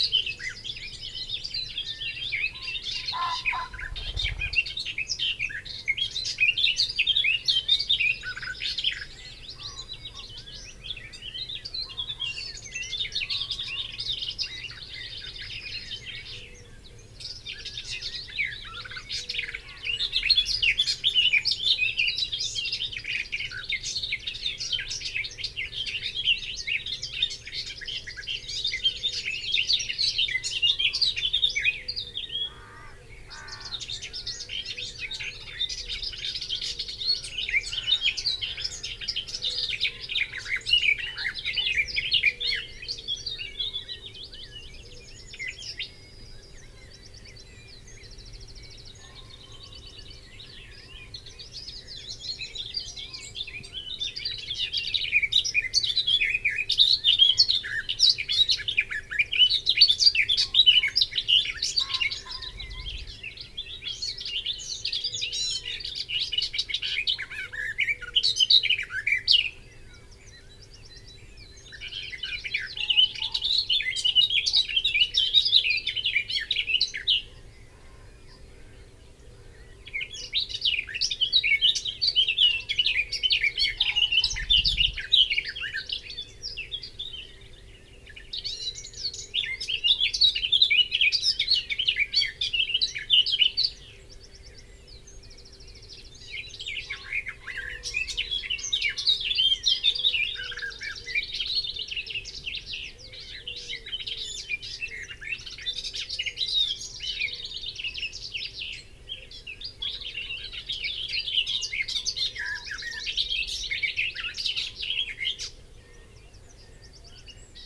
Garden warbler at dawn soundscape ... open lavalier mics clipped to hedgerow ... bird song and calls from ... pheasant ... willow warbler ... blackcap ... wood pigeon ... wren ... yellowhammer ... chaffinch ... blackbird ... background noise from planes and traffic ...